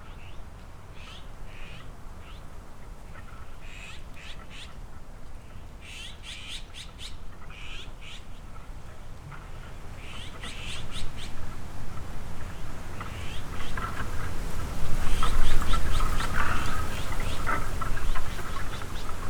바람이 나오는 날_Gusty day in Chuncheon
5 May 2020, 11:30